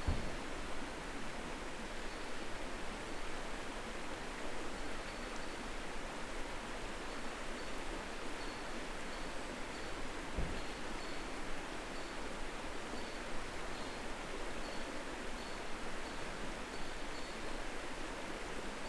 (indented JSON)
{
  "date": "2022-04-13 19:13:00",
  "description": "Sounds of the Gulpha Gorge Campground inside Hot Springs National Park. Gulpha Creek behind the campsite is heard as well as some road traffic, campground noises, and some sirens.\nRecorded with a Zoom H5",
  "latitude": "34.52",
  "longitude": "-93.04",
  "altitude": "175",
  "timezone": "America/Chicago"
}